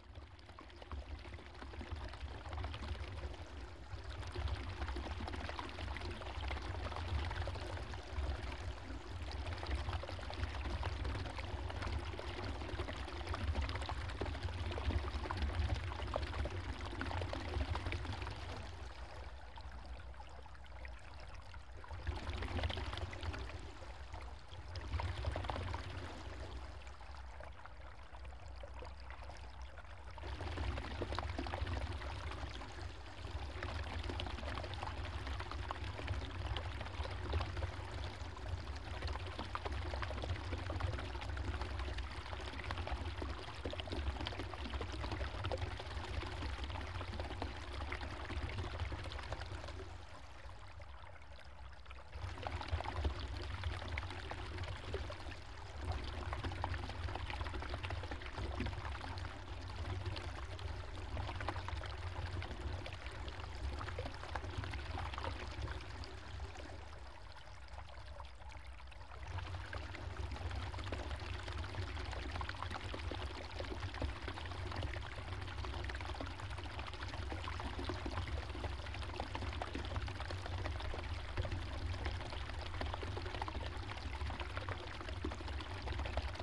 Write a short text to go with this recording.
water running down through a pipe from a mountain waterbank